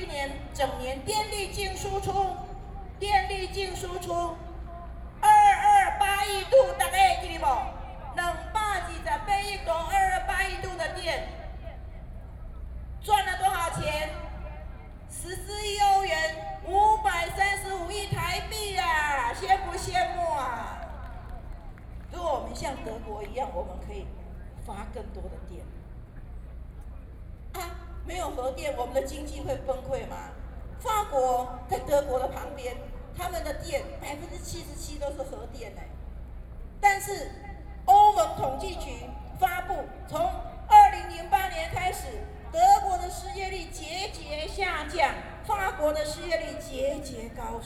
Ketagalan Boulevard, Taipei - speech
against nuclear power, Lawmakers are speech, Sony PCM D50 + Soundman OKM II